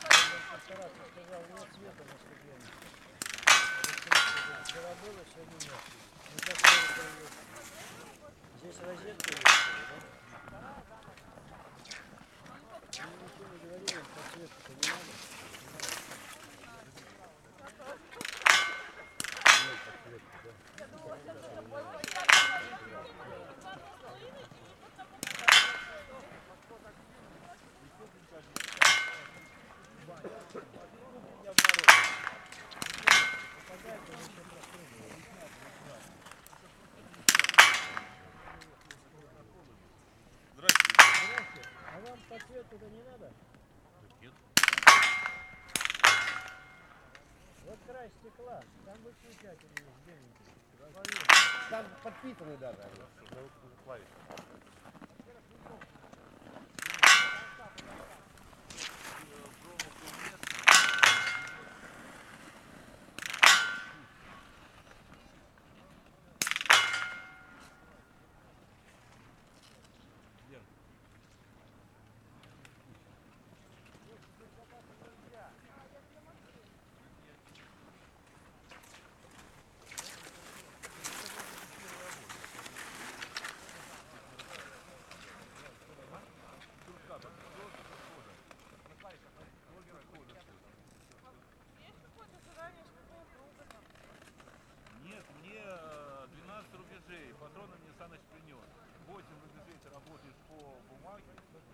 Demino, Russia, Biathlon complex - Shooting routine
Here near the Demino village biathlon complex find itself at the great river of Volga. Cross-country ski fiesta in a beautiful place for everyone. The recording depicts a warm-up shooting before biathlon competition for juniors. Just listen to those early reflections of the shots in a snow situation. It is a honey!
Recorded on Zoom H5 built-in X/Y stereo microphone by hand.
8 January, 12:00